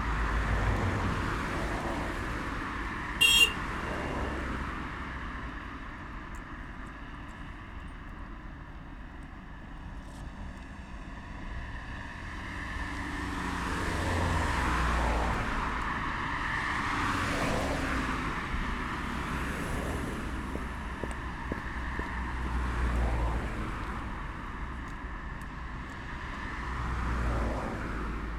{"title": "Puente Andalucia, traffic", "date": "2010-11-21 15:30:00", "description": "passers by and traffic over the river Manzanares.", "latitude": "40.39", "longitude": "-3.70", "altitude": "578", "timezone": "Europe/Madrid"}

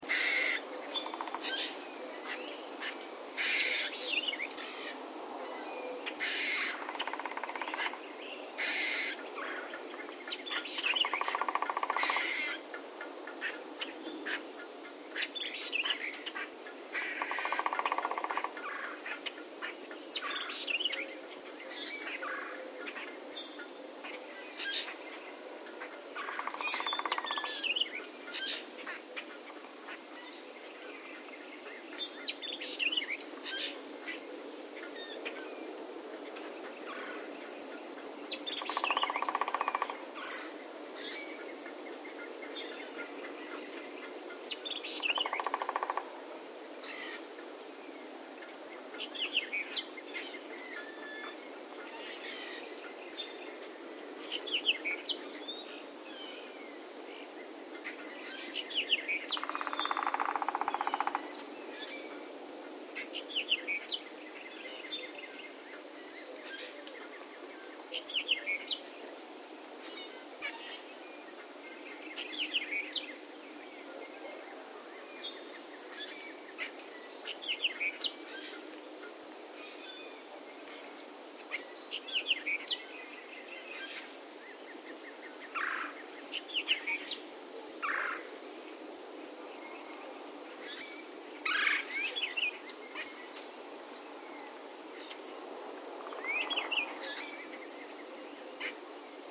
{"title": "Wauchula, FL, USA - Ibis Dawn May 10 2012", "date": "2013-05-10 06:15:00", "description": "Rural\nEcotone transition Wooded to Marsh\nRainy night-Rain still dripping on metal\nSounds- Squirrels, Woodpecker, rain drips on metal, car on road\n~ 1 mile distance\nLS10 Recorder- Internal Microphone", "latitude": "27.48", "longitude": "-81.57", "altitude": "26", "timezone": "America/New_York"}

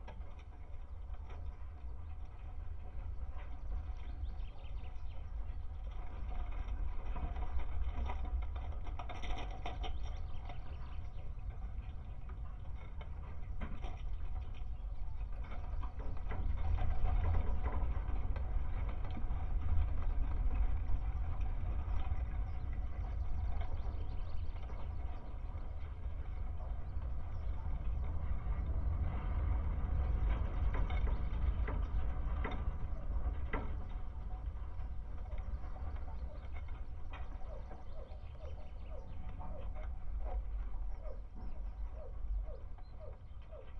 {"title": "Bikuskis, Lithuania, the fence at abandoned sport yard", "date": "2019-05-18 16:20:00", "description": "contact microphones on the fence surrounding abandoned sport yard", "latitude": "55.61", "longitude": "25.69", "altitude": "147", "timezone": "Europe/Vilnius"}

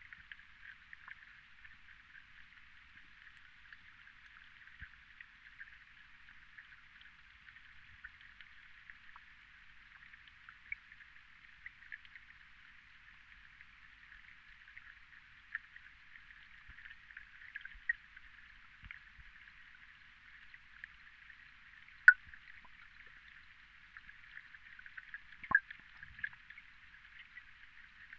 {"title": "Medeniai, Lithuania. silent underwater", "date": "2018-07-08 17:10:00", "description": "hydrophones. always wanted to put hydros to this pond...surprise - almost no bug life underwater", "latitude": "55.49", "longitude": "25.69", "altitude": "167", "timezone": "Europe/Vilnius"}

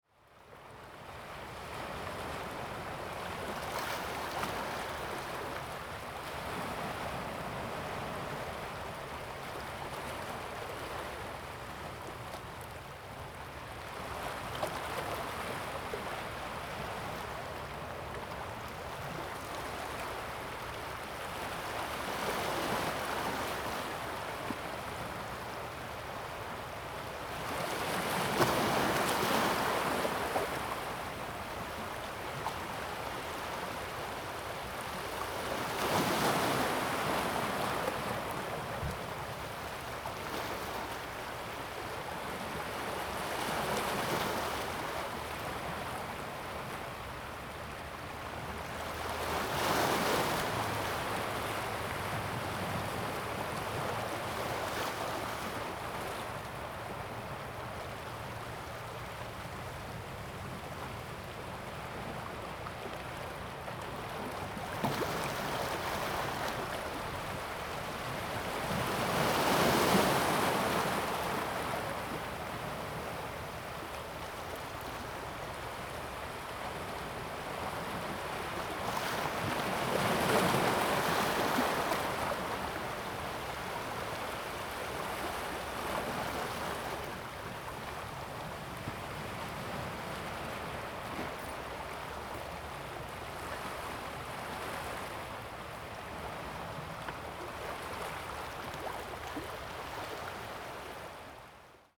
{"title": "Xikou, Tamsui Dist., 新北市 - on the coast", "date": "2016-11-21 16:26:00", "description": "On the coast, Sound of the waves\nZoom H2n MS+XY", "latitude": "25.24", "longitude": "121.45", "timezone": "Asia/Taipei"}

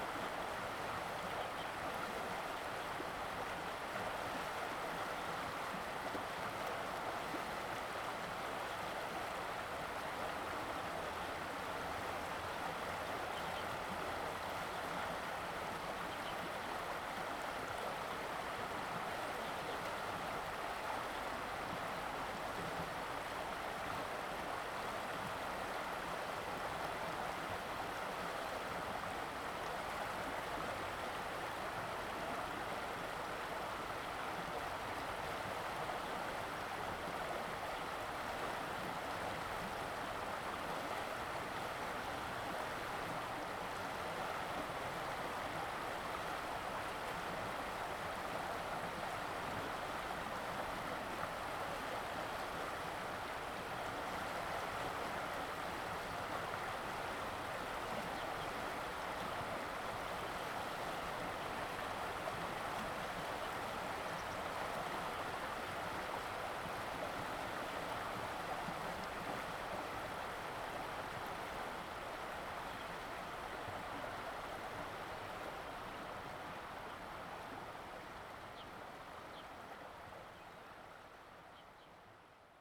{"title": "溪頭, 太麻里溪, 台東縣 - On the embankment", "date": "2018-04-01 14:59:00", "description": "stream, On the embankment, Bird call\nZoom H2n MS+XY", "latitude": "22.59", "longitude": "120.98", "altitude": "43", "timezone": "Asia/Taipei"}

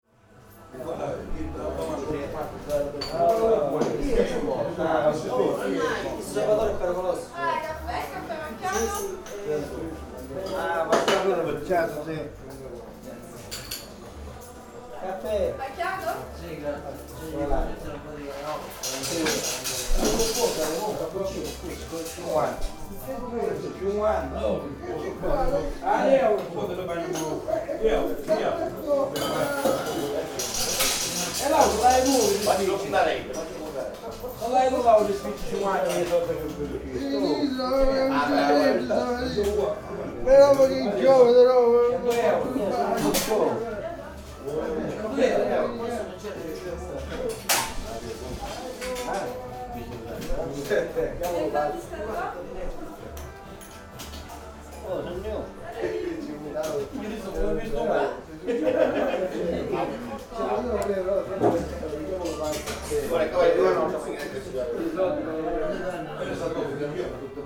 {"title": "lipari harbour - bar moby dick", "date": "2009-10-24 09:20:00", "description": "the moby dick coffee bar fills with people awaiting the arrival of the big nave ferry.", "latitude": "38.47", "longitude": "14.96", "altitude": "6", "timezone": "Europe/Berlin"}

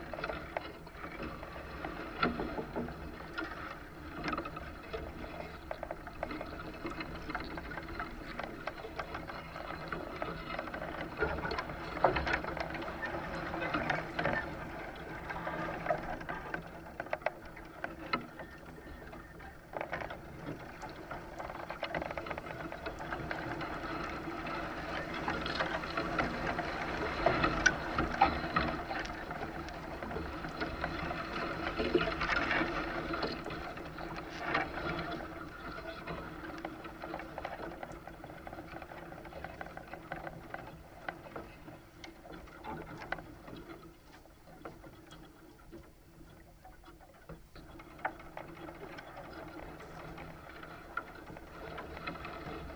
{"title": "Parque Eduardo VII, Lisboa, Portugal - Bamboo canes in a light wind recorded with a contact mic", "date": "2017-09-14 16:05:00", "description": "Intermittent wind through a stand of bamboo recorded with a contact mic attached to two of the canes.", "latitude": "38.73", "longitude": "-9.16", "altitude": "84", "timezone": "Europe/Lisbon"}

